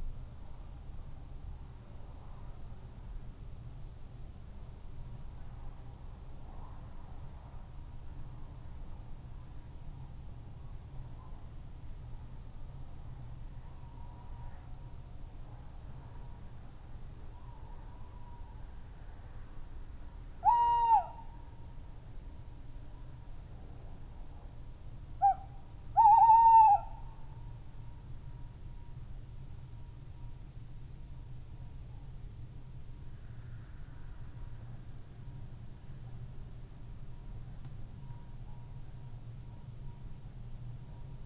Unnamed Road, Malton, UK - tawny owls ...
tawny owls ... male territorial song ... tremulous hoot call ... SASS ...
15 May, 23:00